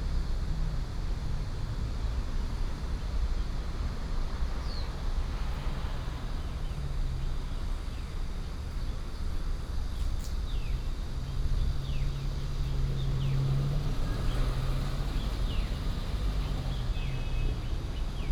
頭城鎮城東里, Yilan County - Under the tree
Birdsong, Under the tree, Very hot weather, Traffic Sound
Toucheng Township, Yilan County, Taiwan, 7 July 2014